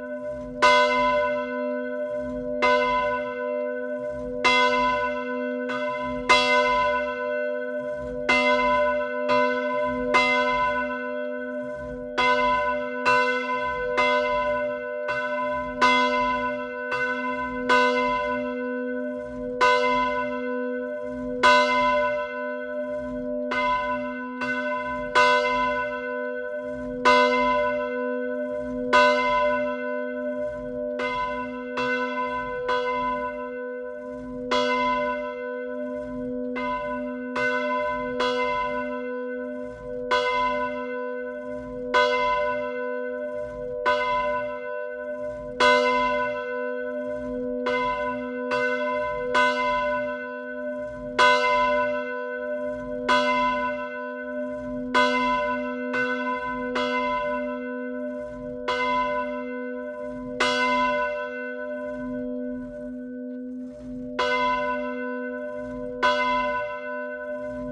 Le Bourg, Tourouvre au Perche, France - Bivilliers - Église St-Pierre
Bivilliers (Orne)
Église St-Pierre
La volée manuelle.